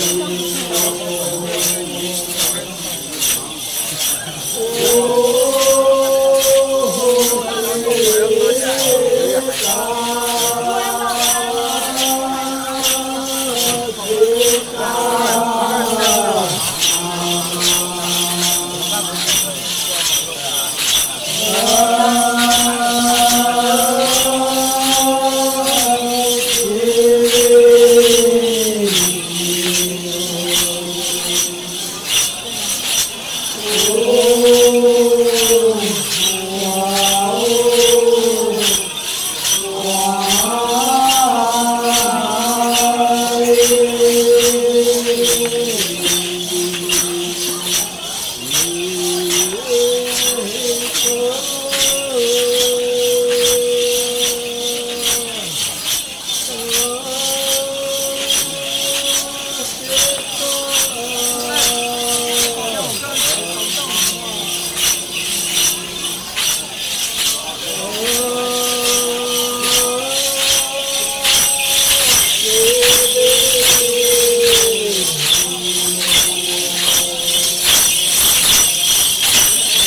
353台灣苗栗縣南庄鄉東河村 - 賽夏族矮靈祭-祭典合唱
Recoding of Pas-ta'ai ceremony in Taiwan.